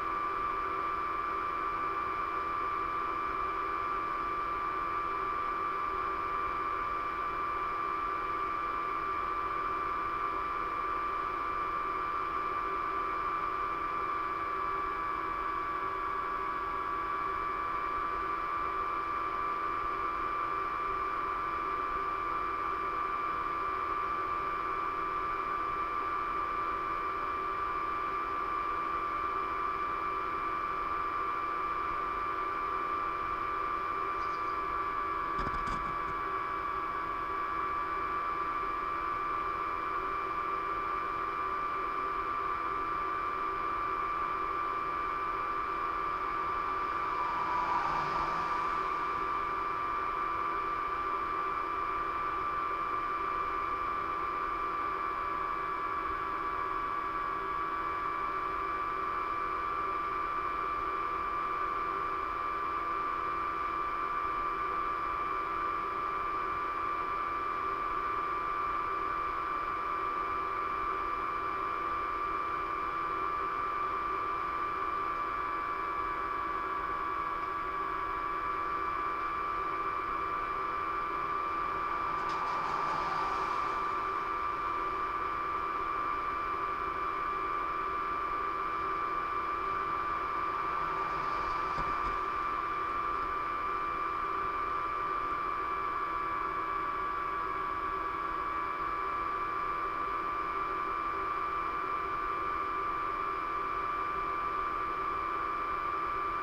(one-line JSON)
{"title": "Post Box, Malton, UK - the defibrillator in the telephone box ...", "date": "2021-12-19 11:40:00", "description": "the defibrillator in the telephone kiosk ... pair of j r french contact mics to olympus ls 14 ...", "latitude": "54.12", "longitude": "-0.54", "altitude": "77", "timezone": "Europe/London"}